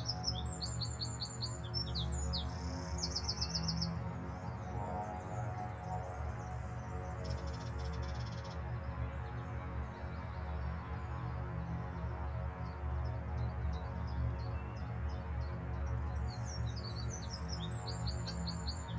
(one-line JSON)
{"title": "Bonaforth Grabeland, Deutschland - Inside water pipe", "date": "2019-04-07 11:15:00", "description": "The microphone is placed at 3 meters depth inside the pipe of a well with suction hand pump.\nRecorded with a DIY microphone based on EM172 capsule and SD702.", "latitude": "51.40", "longitude": "9.63", "altitude": "126", "timezone": "GMT+1"}